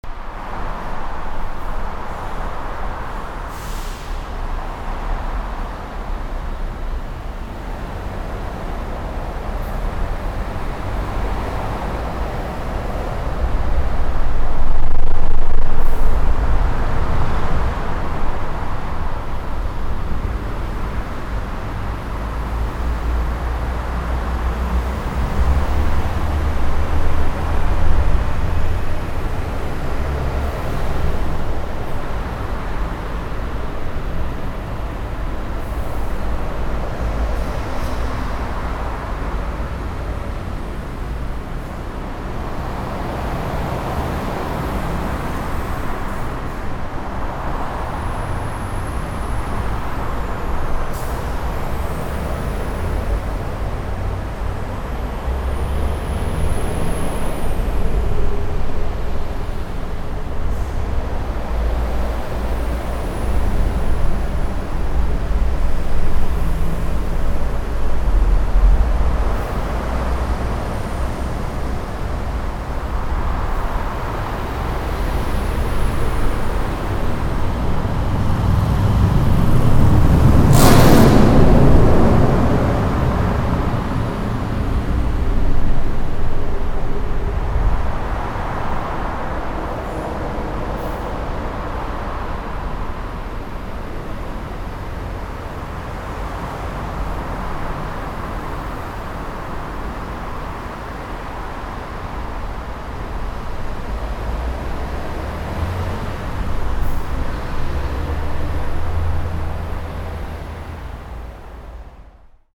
unna, under highway bridge
in dense, slow traffic under a highway bridge. the resonce of the traffic driving fast on the upper track
soundmap nrw - social ambiences and topographic field recordings
1 October, ~17:00